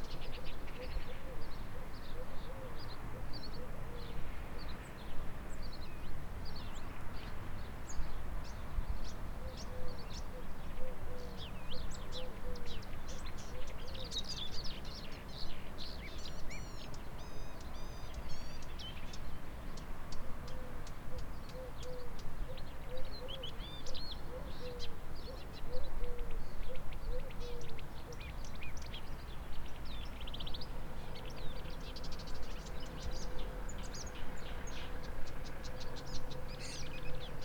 birds went crazy this morning, some of them Ive never heard before.
Poznan, Poland